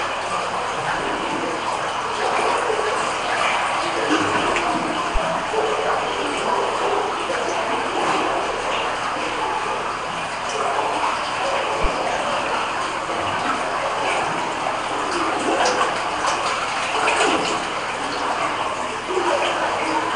Goslar, Germany - Wasser in Kanal, der in Tunnel verschwindet
vor den Marienfelsen